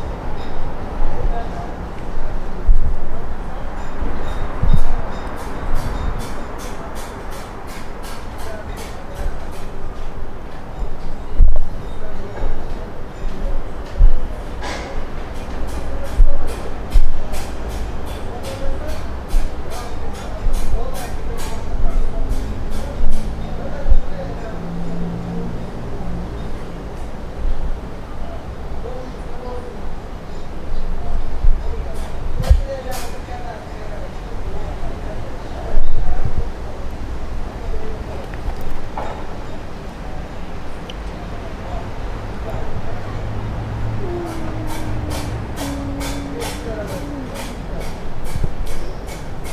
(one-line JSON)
{"title": "ул. Славянская, Нижний Новгород, Нижегородская обл., Россия - secret garden", "date": "2022-07-22 12:11:00", "description": "sound recorded by members of the animation noise laboratory by zoom h4n", "latitude": "56.31", "longitude": "44.00", "altitude": "177", "timezone": "Europe/Moscow"}